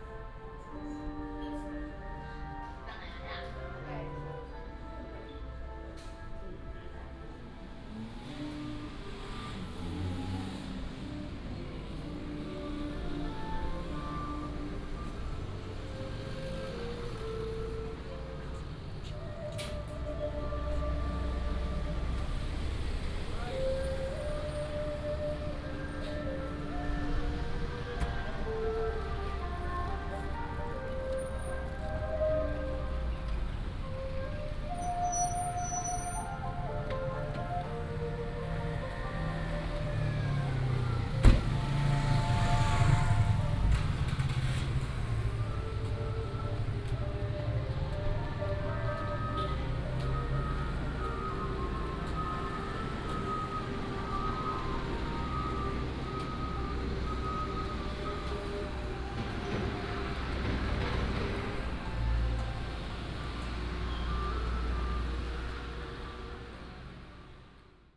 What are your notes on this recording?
Small town, Traffic sound, Walking in the temple